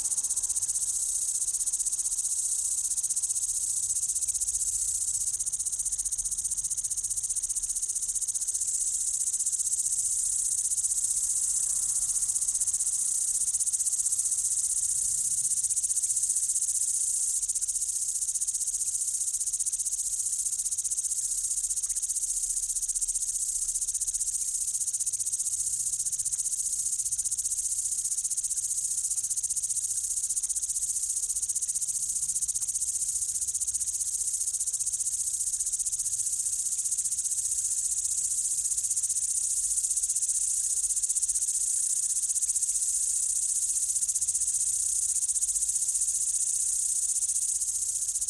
Unterbergstraße, Reit, Deutschland - CricketsBubblingWaterMix
Crickets in the evening mixed with the bubbling water of a small brook.